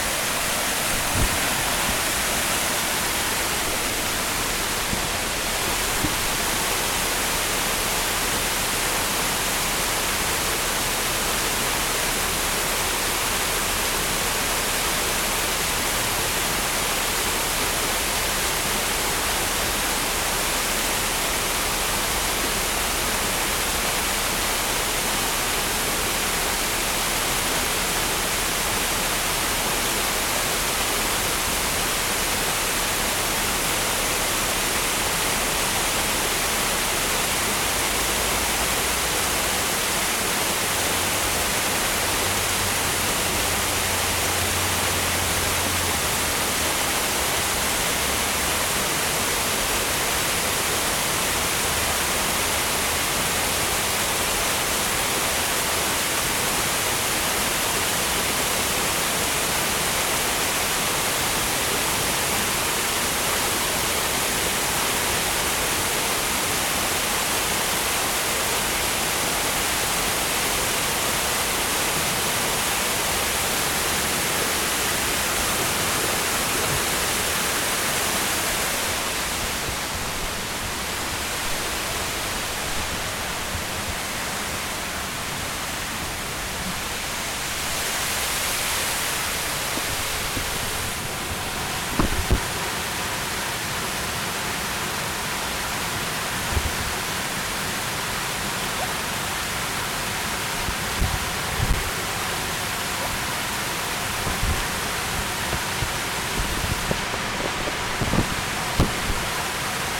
E Randoplh, Chicago, IL, USA - Plaza Level
Using a zoom recoding device, the sound of the sunken plaza water fountain
9 June, 11:51